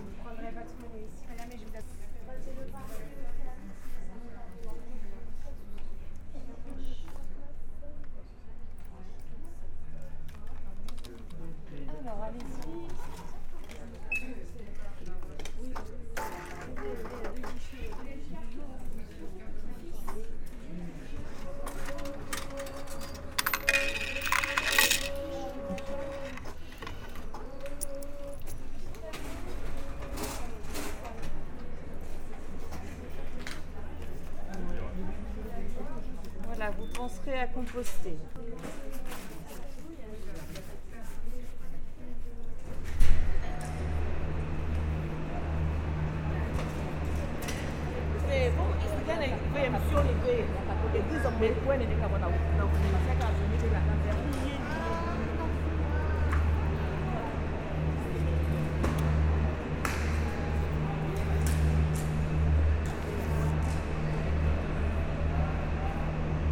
Tours, France - Tours station
The very noisy Tours station atmosphere. The diesel engines flood the huge station with a heavy drone sound. After a walk in the station, I buy a ticket in the office, and I go out near the fountain.